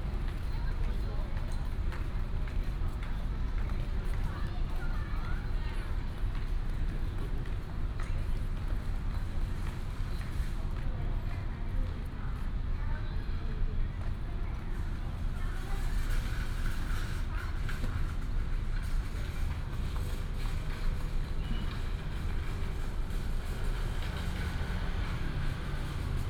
At the entrance to the hypermarket, Traffic sound, Footsteps
Taoyuan City, Zhongli District, 中華路一段450號, 21 April 2018, ~18:00